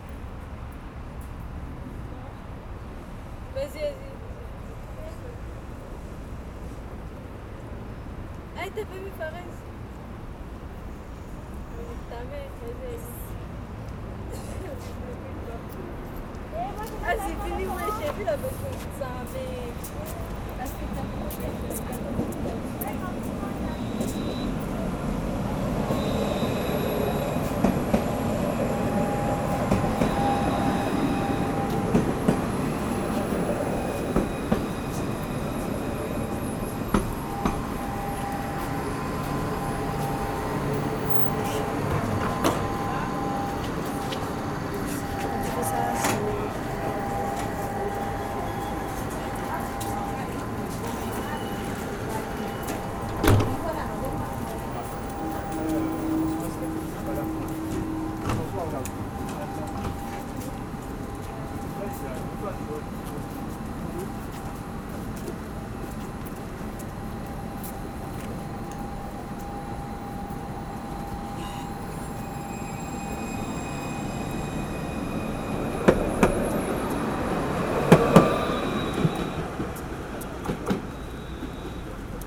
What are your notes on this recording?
The "Musée de Sèvres" station. A group of children is climbing the stairs. A train is arriving, people is going inside and the train leaves.